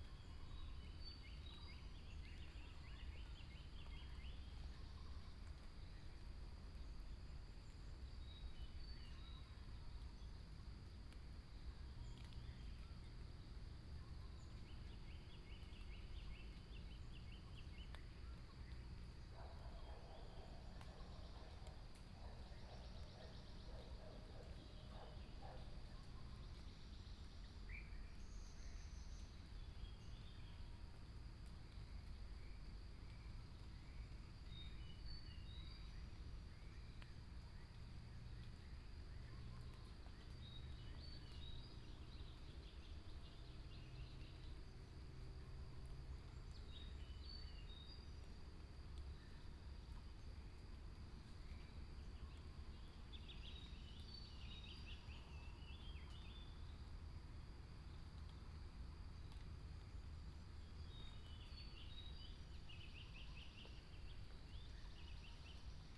Bird sounds, Sound of insects, Morning road in the mountains